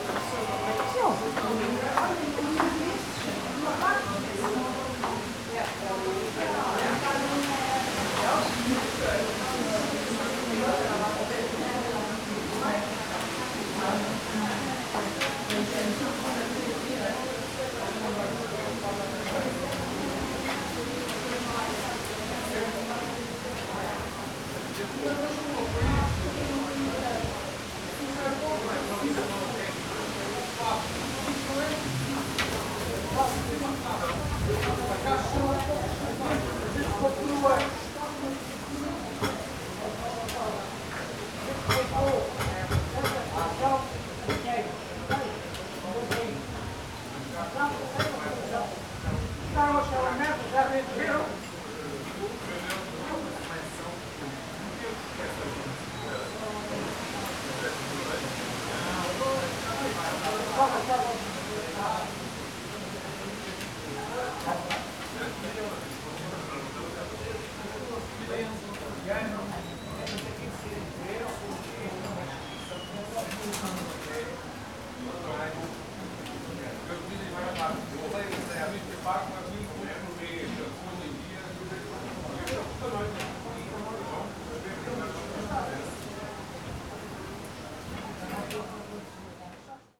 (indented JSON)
{
  "title": "Lisbon, Rua das Flores de Santa Cruz, Castelo - dinner",
  "date": "2013-09-26 14:25:00",
  "description": "recorded in a narrow street. sounds of residents having dinner, watching tv and talking coming from the open windows. a couple of tourists walks by.",
  "latitude": "38.71",
  "longitude": "-9.13",
  "altitude": "94",
  "timezone": "Europe/Lisbon"
}